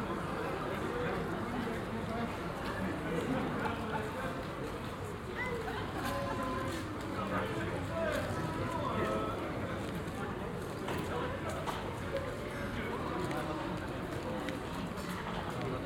Alma, Woluwe-Saint-Lambert, Belgique - Students and metro
Conversations, a few birds, metro and a plane.
Tech Note : SP-TFB-2 binaural microphones → Sony PCM-D100, listen with headphones.
Brussel-Hoofdstad - Bruxelles-Capitale, Région de Bruxelles-Capitale - Brussels Hoofdstedelijk Gewest, België / Belgique / Belgien, January 2022